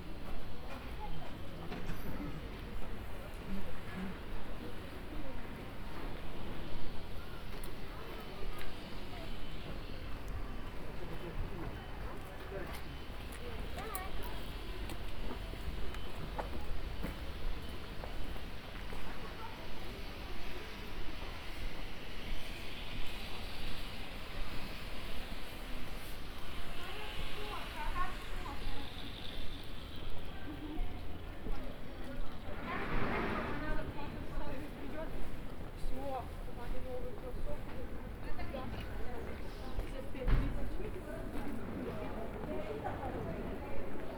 Birobidzhan, Jewish Autonomous Region, Russia - Market at closing time - Soundwalk
Crossing the market, mostly under the roof. Babushkas, kids, footsteps, foil, cardboard. Binaural recording (Tascam DR-07+ OKM Klassik II).